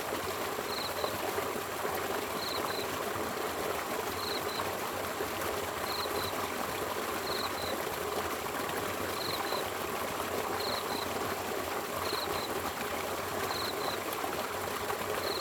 桃米巷桃米里, Puli Township - insects and Flow sound
Aqueduct, Sound of insects, Flow sound
Zoom H2n Saprial audio